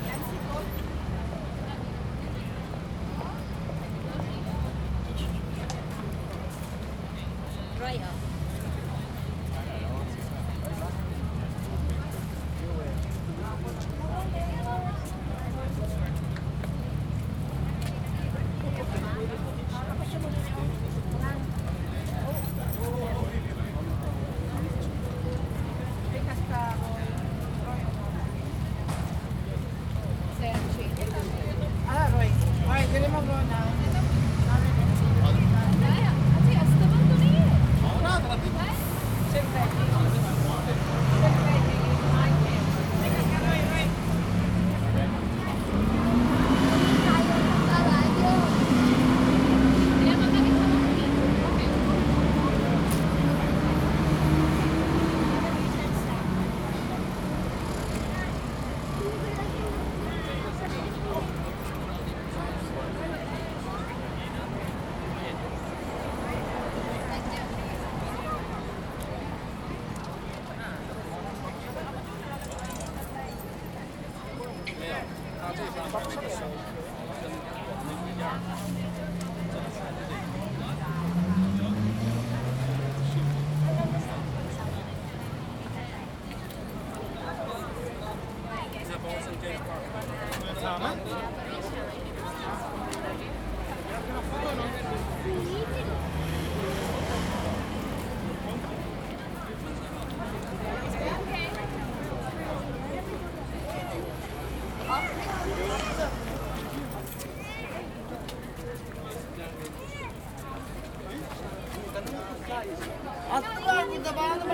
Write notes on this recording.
Walking from Horse Guards Road across Horse Guards Parade, through Horse Guards (the archway) and onto Whitehall, then standing between two mounted cavalry troopers of The Queen's Life Guard. Recorded on a Zoom H2n.